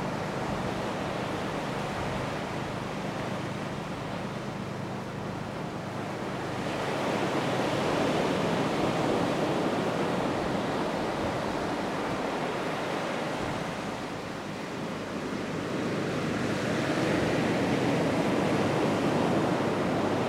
I recorded this ambiance on my last family trip to Mancura. I was alone on the beach with my recorder looking at the waning moon on the horizon. it was one of the most peaceful 4 and a half minutes of my life. it was also the last trip that my brother in law's father was able to make before losing his battle with cancer. I listen to this recording from time to time to remember that no matter how turbulent life might get you can always find peace and tranquility. RIP George Evans. We'll miss you